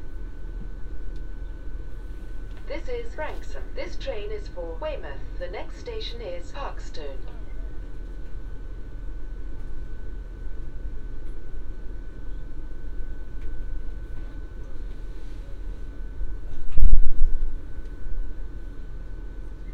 Branksome Hill Rd, Poole, Bournemouth, UK - Quiet Coach Meditation, Branksome to Poole
A ten minute meditation sitting on the Quiet Coach of a train from London Waterloo to Weymouth. (Binaural PM-01s with Tascam DR-05)